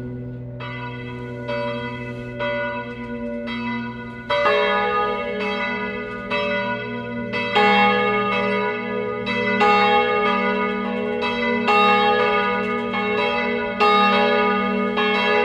Huldange, Luxemburg - Huldange, church, bells
An der Hauptstraße nahe der Kirche. Der Klang der Abendglocken um 20:00 Uhr begleitet vom Straßenverkehr vorbeifahrender Fahrzeuge. Wenn man aufmerkam hinhört, bemerkt man das an- und auschwingen der Glocke im Glockenturm.
At the main street nearby the church. The sound of the church bells at 8 p.m.accompanied by the traffic sound of cars passing by. If you listen careful you can hear the swinging of the bell in the bell tower.